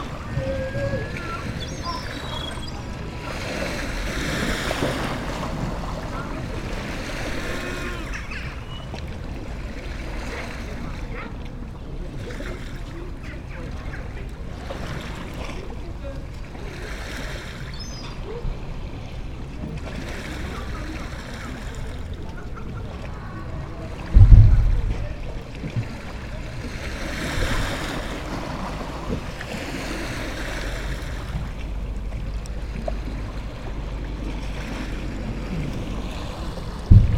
seagulls searching for leftovers from the fish market, old people small talks, waves